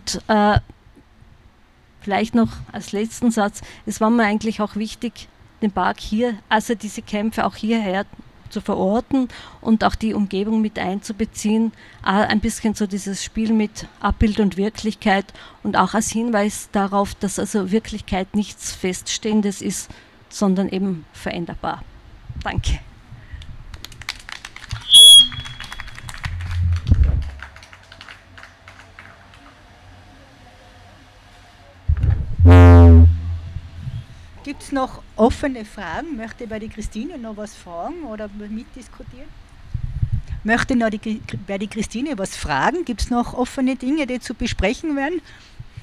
Innstraße, Innsbruck, Österreich - vogelweide 2018
Eröffnung Premierentage 2018: Not just for Trees, Christine S. Prantauer
Innsbruck, Austria, 9 November 2018